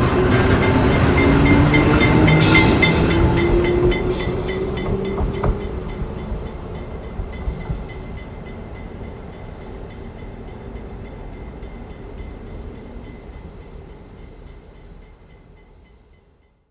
{"title": "富岡機廠, Yangmei City - Train being tested", "date": "2014-08-06 10:34:00", "description": "Train traveling back and forth to test\nZoom H6 MS +Rode NT4 ( Railway Factory 20140806-11)", "latitude": "24.93", "longitude": "121.06", "altitude": "98", "timezone": "Asia/Taipei"}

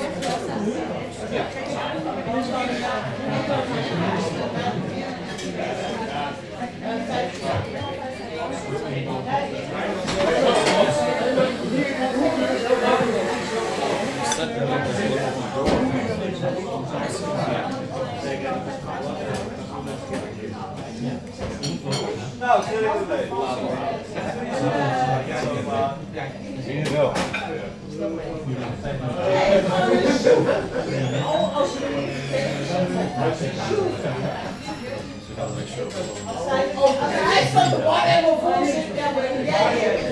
{"title": "Westelijke Eilanden, Amsterdam, Nederland - Bar interior.", "date": "2013-04-15 18:45:00", "description": "Bar interior of Café de Oranjerie.\nRecorded with Zoom H2 internal mics.", "latitude": "52.38", "longitude": "4.89", "altitude": "5", "timezone": "Europe/Amsterdam"}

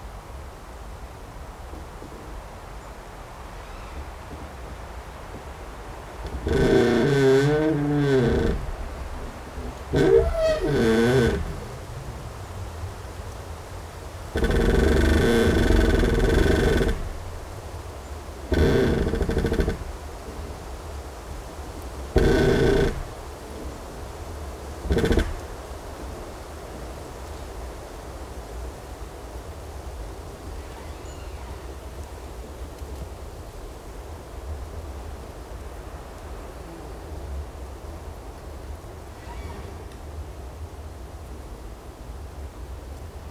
{"title": "Creaking tree 1 internal and external, Vogelsang, Zehdenick, Germany - Creaking tree 1 internal and external sounds mixed", "date": "2021-08-25 12:46:00", "description": "There is also a lovely deep bass from wind blowing through the upper branches and leaves even when it is not creaking.\nThe contact mics are simple self made piezos, but using TritonAudio BigAmp Piezo pre-amplifiers, which are very effective. They reveal bass frequencies that previously I had no idea were there.", "latitude": "53.05", "longitude": "13.37", "altitude": "55", "timezone": "Europe/Berlin"}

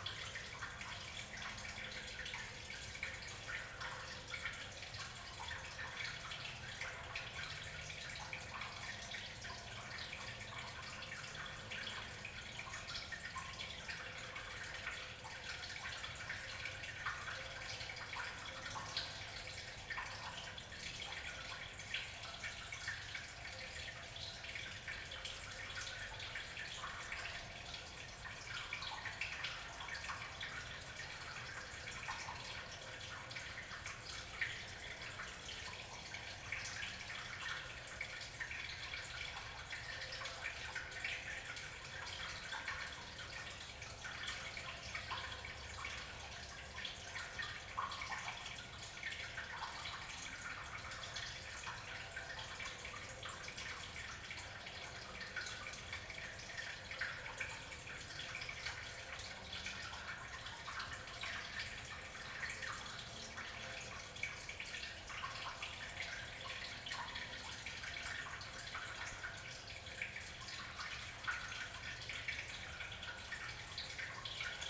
{"title": "Meramec Levee, Valley Park, Missouri, USA - East Gatewell", "date": "2020-12-06 15:29:00", "description": "Recording of gatewell in eastern section of Valley Park Meramec Levee", "latitude": "38.56", "longitude": "-90.47", "altitude": "123", "timezone": "America/Chicago"}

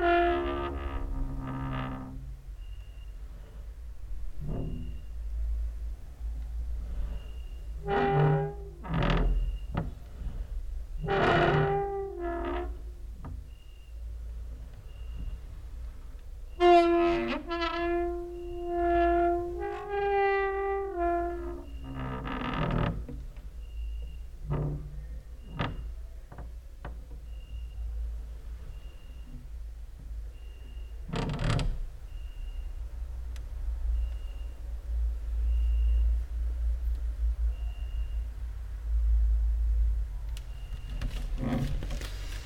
{"title": "Mladinska, Maribor, Slovenia - late night creaky lullaby for cricket/19", "date": "2012-08-28 23:41:00", "description": "cricket outside, exercising creaking with wooden doors inside", "latitude": "46.56", "longitude": "15.65", "altitude": "285", "timezone": "Europe/Ljubljana"}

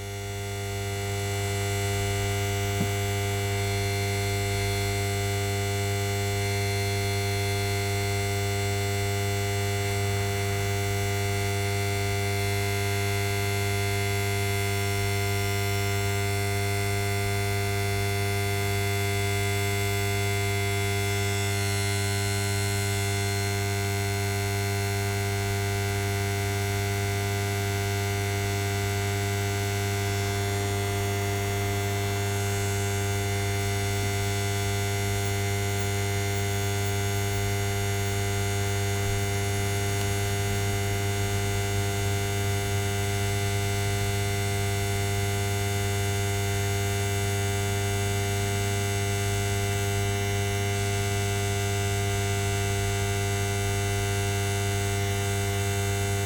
{"title": "Marysienki housing estate, Piatkowo district, Poznan - converter box", "date": "2018-09-15 22:54:00", "description": "small converter box buzzing fiercely. quite interesting that the buzz isn't stable as if it was modulated by some source. (roland r-07 internal mics)", "latitude": "52.46", "longitude": "16.90", "altitude": "102", "timezone": "Europe/Warsaw"}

